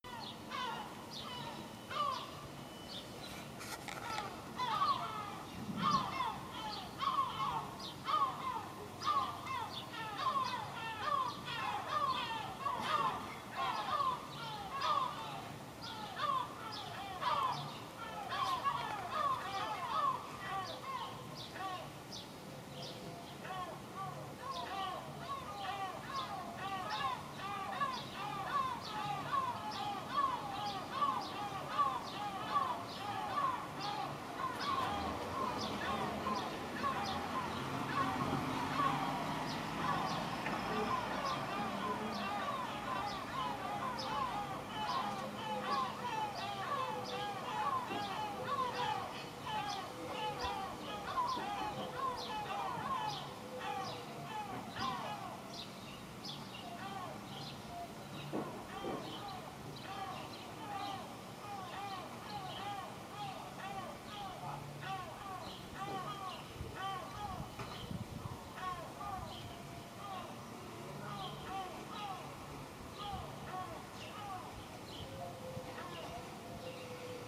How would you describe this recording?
My hometown is crowded with huge seagulls. Their shouts can be almost constantly heard. This morning there were five or six of them on the roof, shouting a personal concert. Note: I left home my Tascam and I had to record them using my small compact Lumix camera.